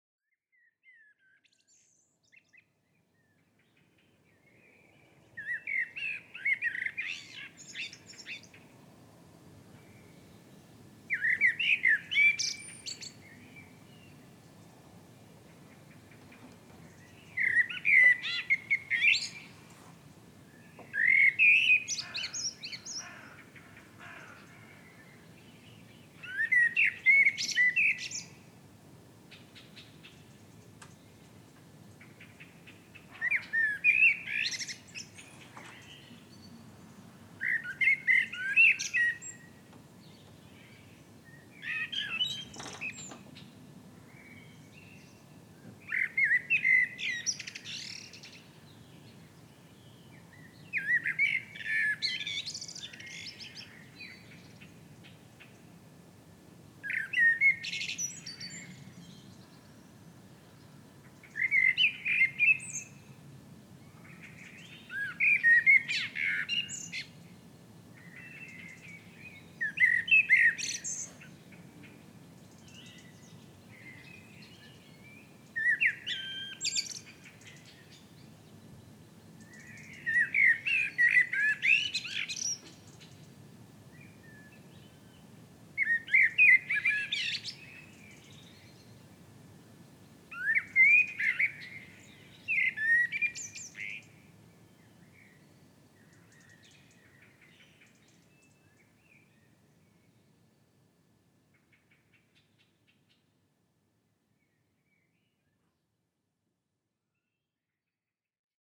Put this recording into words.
primtemps .21hr. un oiseau commence son chant.campagne. countryside.evening bird chant.